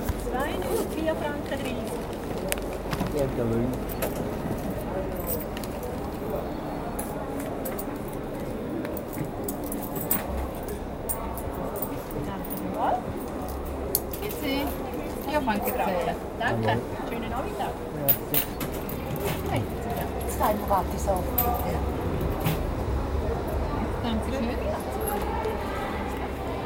st. gallen (CH), main station, pedestrian subway - st. gallen (CH), main station, pedestrian underpass
recorded june 16, 2008. - project: "hasenbrot - a private sound diary"
Saint Gallen, Switzerland